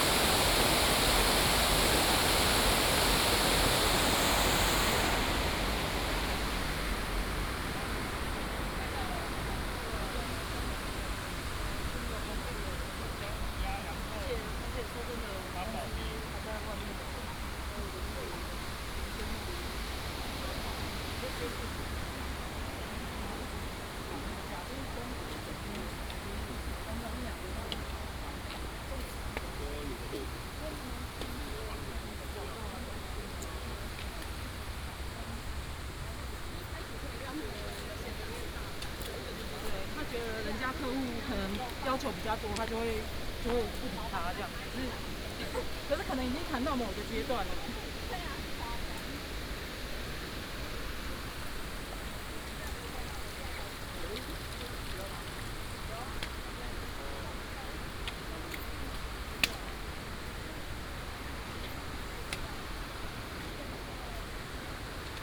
楊廷理古道, 雙溪區新北市 - Stream sound
In the mountains, Stream sound, the ancient trail
Sonu PCM D100 XY